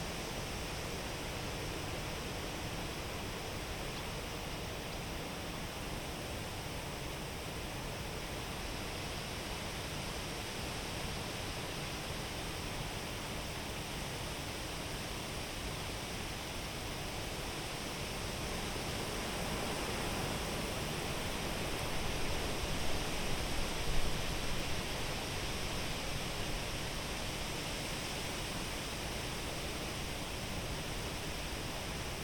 Kintai, Lithuania, under the biggest lithuanian thuja
Binaural recording: standing under Kintai's thuja - the biggest thuja in Lithuania.
Šilutės rajono savivaldybė, Klaipėdos apskritis, Lietuva